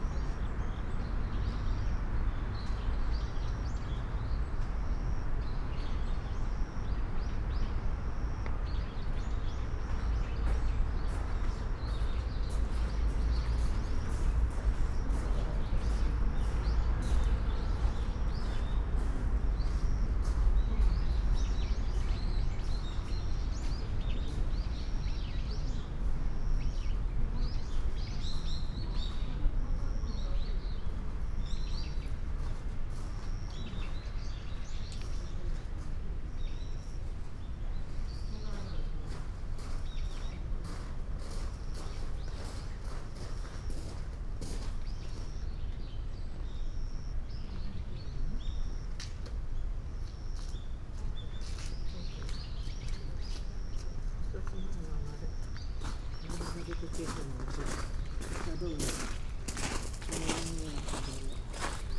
cherry tree, Honpoji, Kyoto - red leaves, gray gravel carpet
gardens sonority, steps, birds, voices
Kyōto-fu, Japan, 31 October 2014, ~14:00